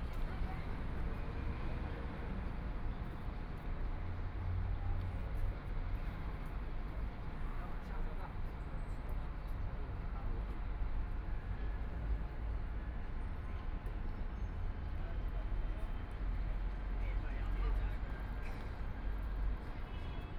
上海浦東新區 - in the square
Sitting in the square next to the, Discharge, The crowd, Traffic Sound, Binaural recording, Zoom H6+ Soundman OKM II